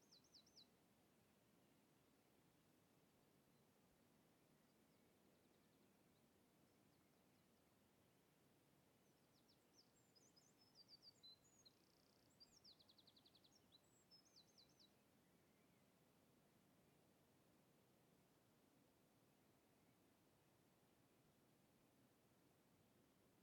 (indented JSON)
{
  "title": "Lisburn, Reino Unido - Derriaghy Dawn-02",
  "date": "2014-06-22 04:29:00",
  "description": "Field Recordings taken during the sunrising of June the 22nd on a rural area around Derriaghy, Northern Ireland\nZoom H2n on XY",
  "latitude": "54.55",
  "longitude": "-6.04",
  "altitude": "80",
  "timezone": "Europe/London"
}